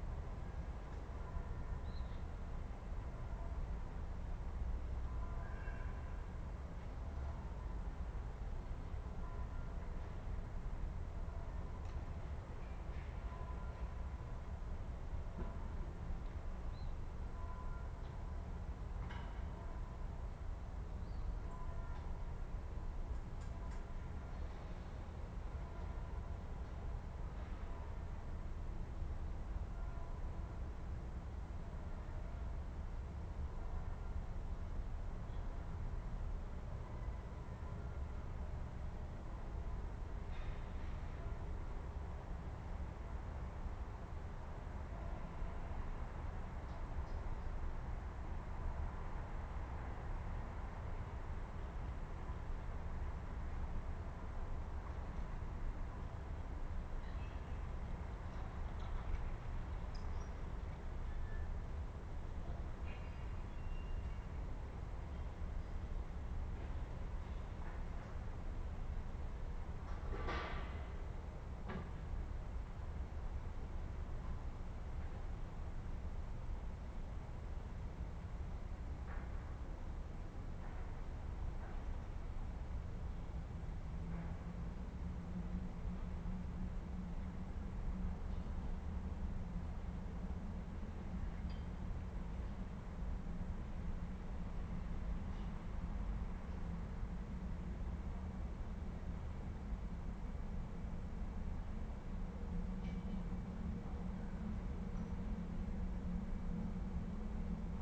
"Five ambiances in the time of COVID19" Soundscape
Chapter XXXVII of Ascolto il tuo cuore, città. I listen to your heart, city
Wednesday April 8 2020. Fixed position on an internal terrace at San Salvario district Turin, twenty nine days after emergency disposition due to the epidemic of COVID19.
Five recording realized at 8:00 a.m., 11:00 a.m., 2:00 p.m., 5:00 p.m. and 8:00 p.m. each one of 4’33”, in the frame of the project Les ambiances des espaces publics en temps de Coronavirus et de confinement, CRESSON-Grenoble research activity.
The five audio samplings are assembled here in a single audio file in chronological sequence, separated by 7'' of silence. Total duration: 23’13”